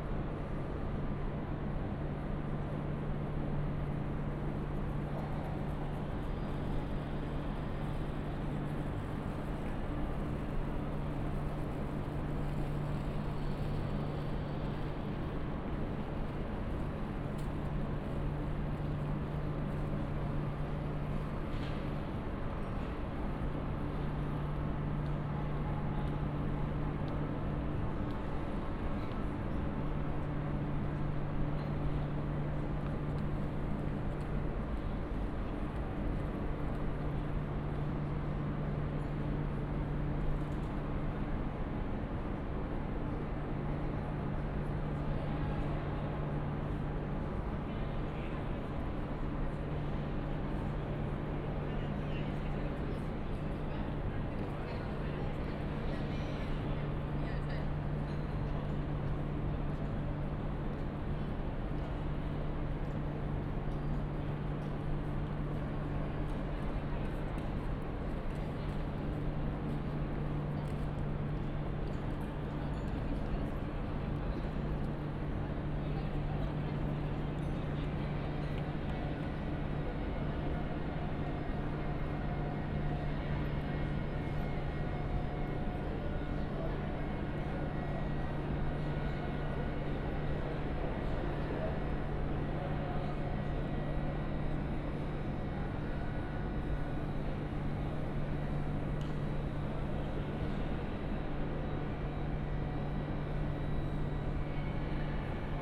Ruzafa, Valencia, Valencia, España - Tren Diesel
Tren Diesel en estación de Valencia. Luhd binaural